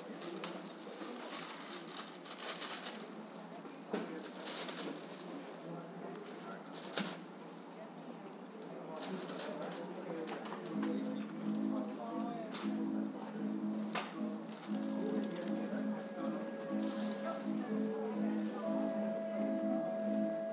Randers C, Randers, Danmark - Market day part2
Second part of my time in the market
14 March 2015, 12:15